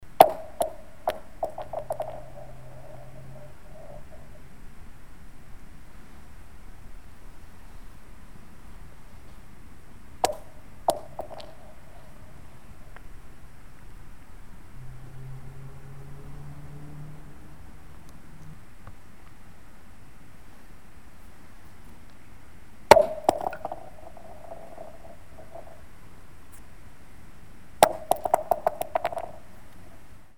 Pasac, Rjecina river, Frozen river
Throwing rocks on a frozen Rjecina river.